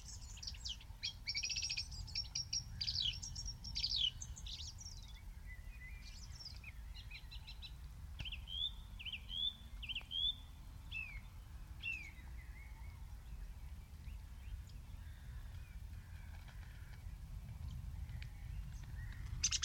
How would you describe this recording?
These fields are huge and farmed industrially. A few hedgerows remain and are home for more birds than I expected. A song thrush sings loudly from the one tall tree but takes to the wing to chase off a rival. The birds' flight and fluttering movement ruffling close to the microphones on occasion - a quick but intense encounter. Wood pigeons call, crows, pheasants and skylarks are the background. An early owl hoots in the far distance. Someone is shooting - no idea at what. Shots and bird scarers are a constant in rural Suffolk. Given the agri-chemical onslaught on these fields I guess the, at times, war zone soundscape is fitting.